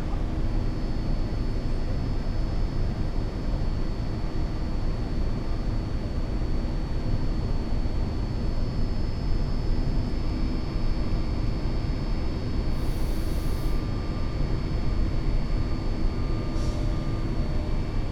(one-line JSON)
{"title": "Hauptbahnhof Bremen - platform 8 ventilation drone", "date": "2016-06-05 20:30:00", "description": "exhaust air fan drone at platform 8, Bremen main station\n(Sony PCM D50, Primo EM172)", "latitude": "53.08", "longitude": "8.82", "altitude": "7", "timezone": "Europe/Berlin"}